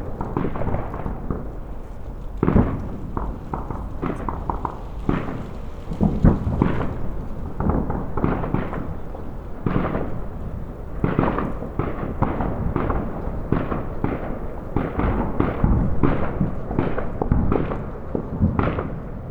New Year Fireworks - Malvern, Worcestershire, UK
New Years celebrations in Malvern Wells. Recorded in my back garden using a Sound Devices Mix Pre 6 11 at 32bitFP x 24K with 2x Sennheiser MKH 8020s. The sounds are coming from nearby and across the Severn Valley eastwards towards Bredon Hill.